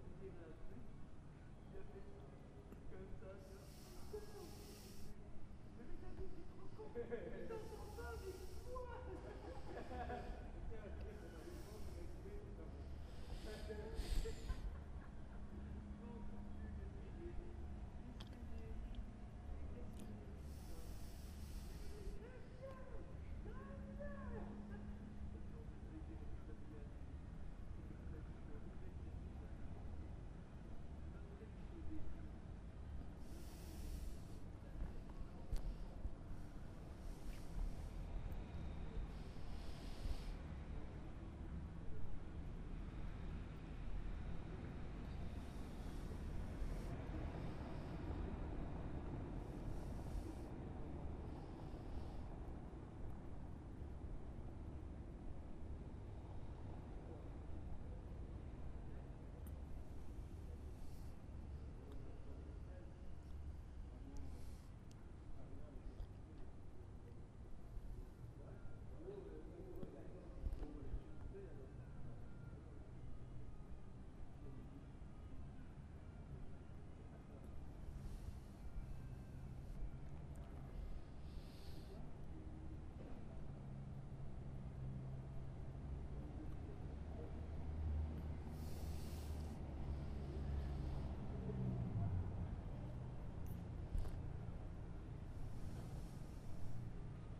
Sur les hauteur de Mons (BE) entre Sainte Waudru et le beffro, vers 1h du matin, psté sur un bac public.
Glasgow, Glasgow City, UK, 7 September 2014, 01:30